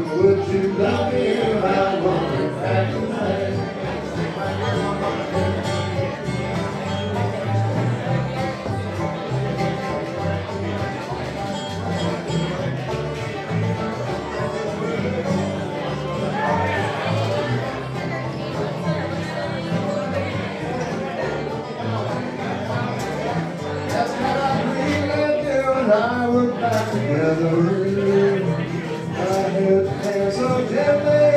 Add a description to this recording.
The Albatros pub with live country music